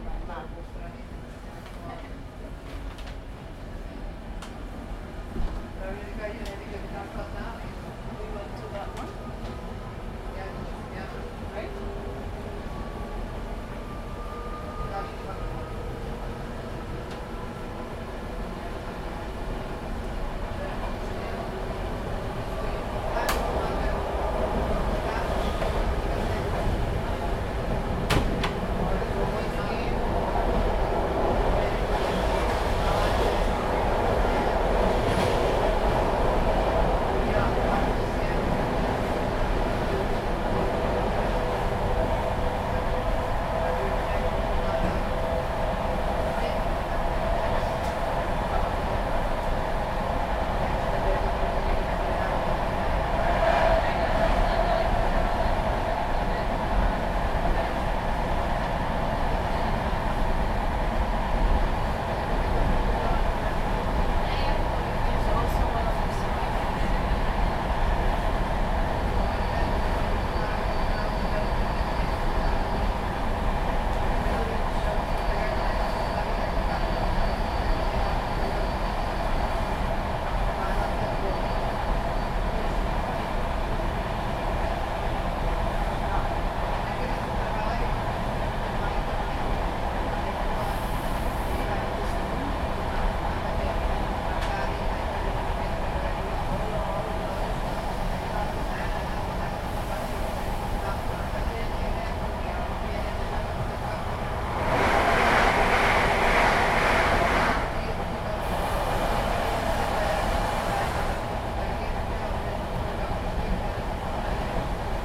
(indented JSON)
{
  "title": "Modřice, Modřice, Česko - Train ride through Modřice, Brno",
  "date": "2019-07-25 10:43:00",
  "description": "Train drumming and clacking, people chatting, passengers getting on.\nZoom H2n, 2CH, handheld.",
  "latitude": "49.13",
  "longitude": "16.61",
  "altitude": "206",
  "timezone": "Europe/Prague"
}